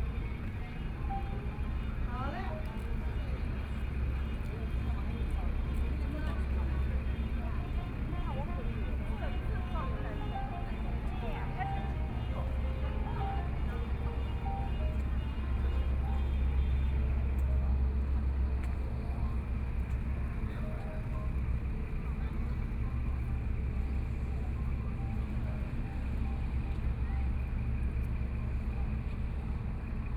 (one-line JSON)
{"title": "漁人碼頭, Kaohsiung City - In the dock", "date": "2014-05-21 18:10:00", "description": "In the dock, china Tourists, Sound from Ferry\nSony PCM D50+ Soundman OKM II", "latitude": "22.62", "longitude": "120.28", "altitude": "3", "timezone": "Asia/Taipei"}